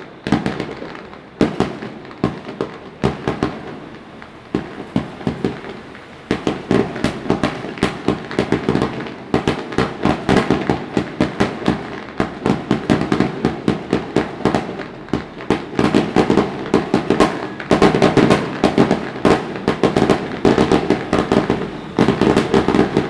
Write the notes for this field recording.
Firecrackers and fireworks, Zoom H2n MS +XY